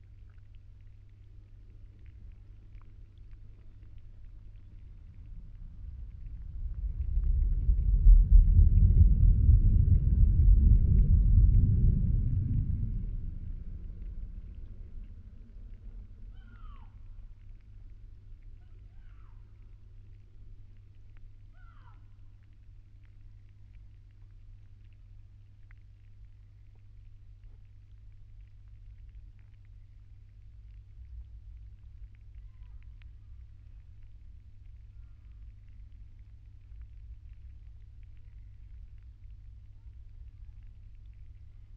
Suezkade, Den Haag - hydrophone rec from a closed footbridge, next to the electricity factory
Mic/Recorder: Aquarian H2A / Fostex FR-2LE